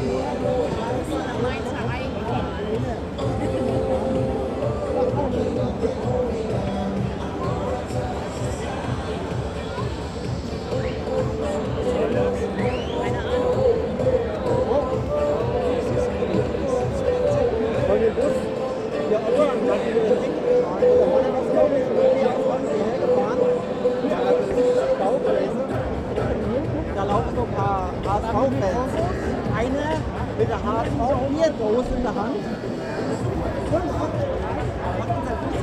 before the football match mainz 05 - hamburger sv, footbal fans of mainz 05, stadium commentator
the city, the country & me: october 16, 2010